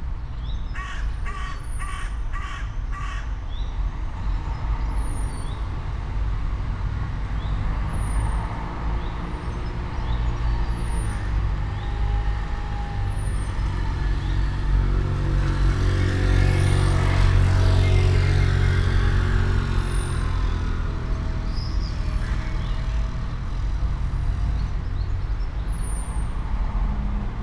all the mornings of the ... - jun 19 2013 wednesday 08:19
Maribor, Slovenia, 19 June